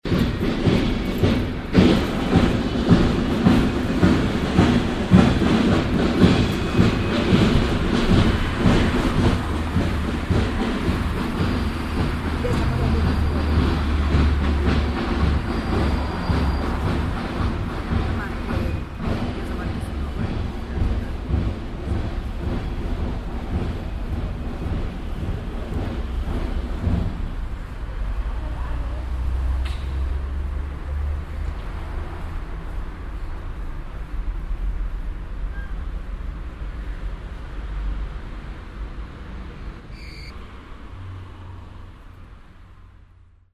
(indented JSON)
{
  "title": "erkrath, kleine trommelgruppe, taxifahrer karneval - erkrath, kreuz strasse, karneval",
  "date": "2008-04-18 13:39:00",
  "description": "kleine trommelgruppe, taxifahrer karneval\nproject: :resonanzen - neanderland soundmap nrw: social ambiences/ listen to the people - in & outdoor nearfield recordings",
  "latitude": "51.22",
  "longitude": "6.91",
  "altitude": "57",
  "timezone": "Europe/Berlin"
}